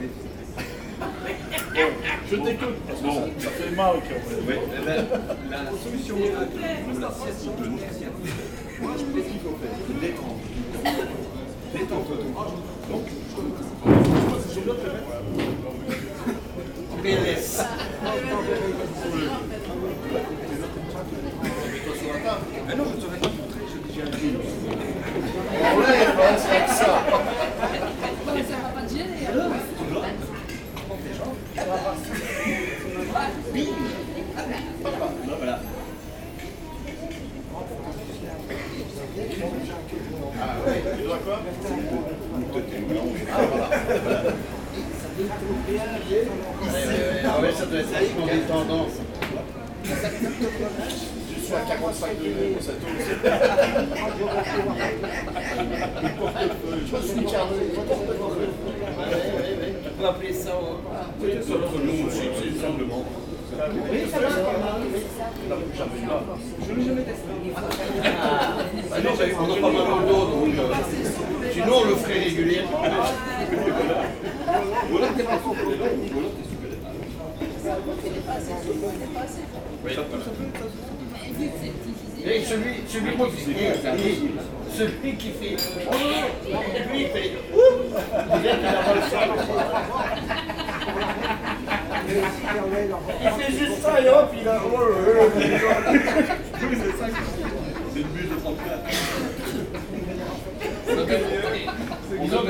Namur, Belgique - Bar terrace
Near the train station, people are sitting on the terrace and speaking loudly. During this time, we guess a good-natured discussion. Namur is a warm city.
Namur, Belgium, 23 November